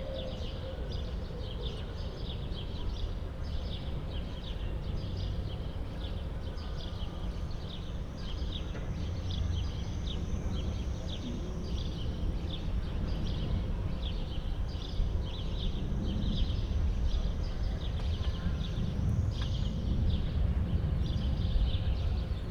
Bruno-Apitz-Straße, Berlin, Deutschland - within residential block
within a residental building block, sounds echoing between the walls, early evening ambience
(Sony PCM D50, DPA4060)